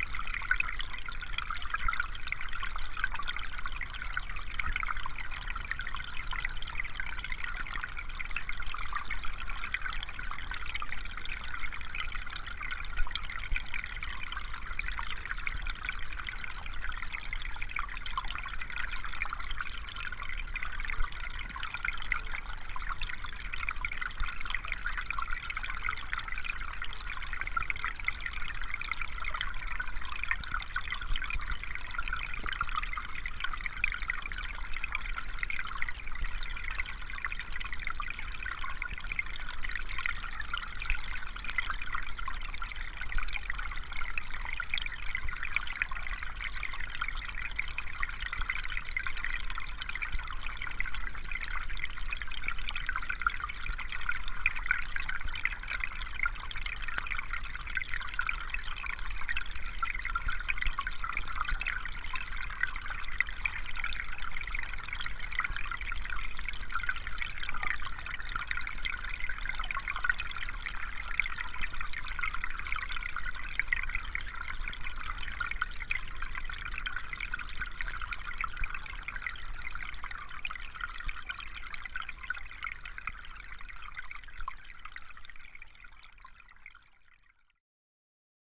{"title": "Utena, Lithuania, autumn, underwater", "date": "2018-11-18 15:40:00", "description": "hydrophones in the stream in local moor", "latitude": "55.50", "longitude": "25.57", "altitude": "106", "timezone": "GMT+1"}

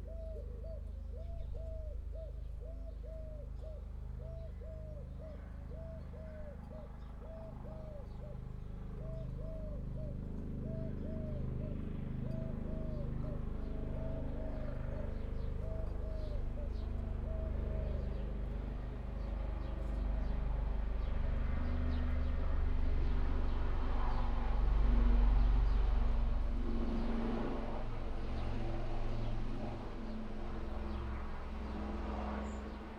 {"title": "Luttons, UK - helicopter fly past ...", "date": "2019-07-31 15:00:00", "description": "helicopter fly past ... lavalier mics blue tacked to door uprights ... bird calls ... collared dove ... house sparrow ... blackbird ...", "latitude": "54.12", "longitude": "-0.54", "altitude": "76", "timezone": "Europe/London"}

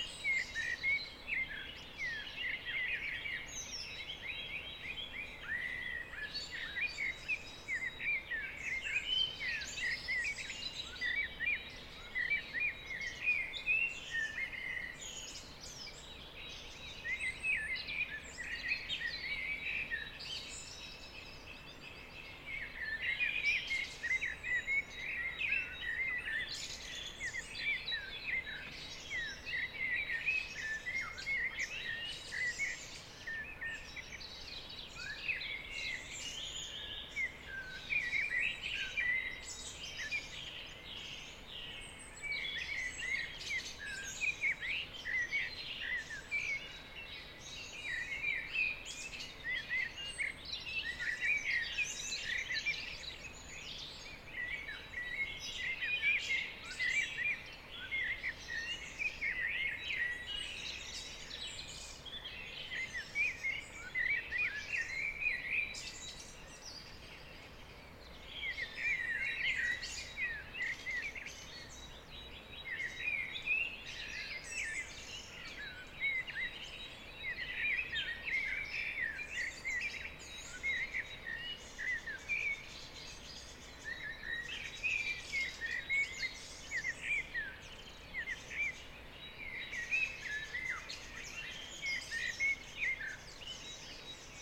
{"title": "V Rokli, Radčice, Liberec, Česko - Tuesday morning", "date": "2022-04-19 05:05:00", "description": "I woke up in the morning, opened the window and recorded birds chorus.", "latitude": "50.81", "longitude": "15.07", "altitude": "482", "timezone": "Europe/Prague"}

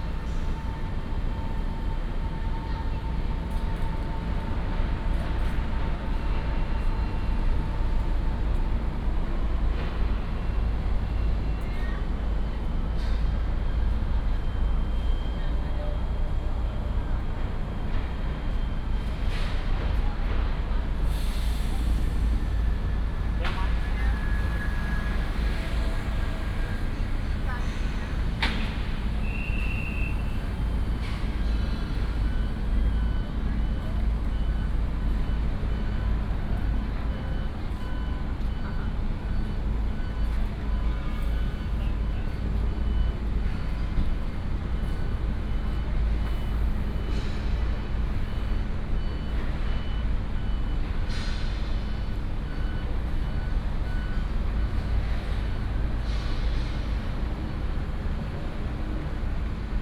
{"title": "Xinmin St., East Dist., Taichung City - Traffic sound", "date": "2017-03-22 14:47:00", "description": "Traffic sound, Outside the bus station, Helicopter sound, Construction sound, The sound of the train station", "latitude": "24.14", "longitude": "120.69", "altitude": "83", "timezone": "Asia/Taipei"}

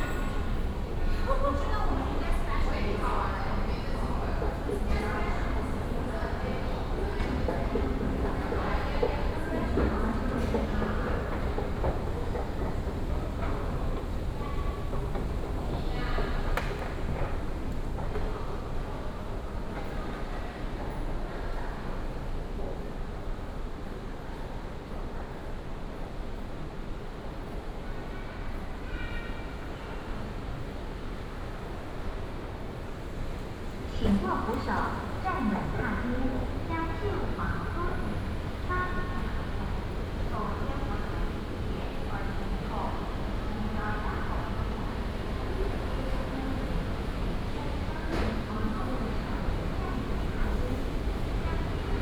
{"title": "Xinpu Station, Banqiao District, New Taipei City - Walking through the MRT Station", "date": "2015-07-29 16:22:00", "description": "Walking in the MRT Station, Footsteps and Traffic Sound", "latitude": "25.02", "longitude": "121.47", "altitude": "8", "timezone": "Asia/Taipei"}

